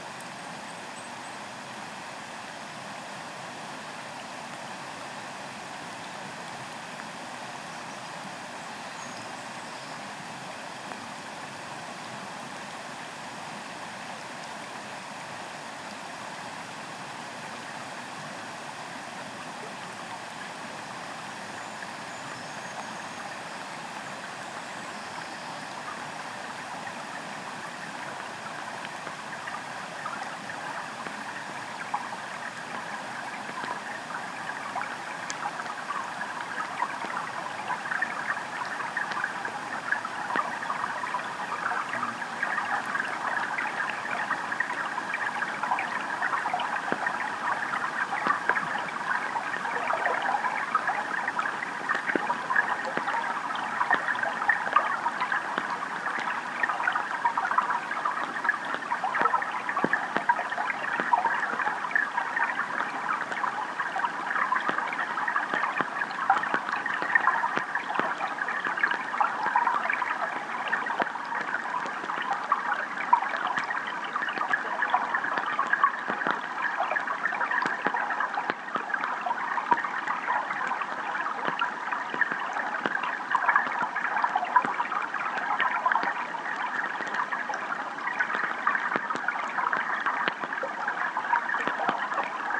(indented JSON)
{
  "title": "Mousebank Rd, Lanark, UK - Water Ferrics Recording 001: Mouse Water Drizzle",
  "date": "2020-06-26 13:31:00",
  "description": "3-channel recording with a stereo pair of DPA 4060s and an Aquarian Audio H2a hydrophone into a Sound Devices MixPre-3.",
  "latitude": "55.69",
  "longitude": "-3.78",
  "altitude": "148",
  "timezone": "Europe/London"
}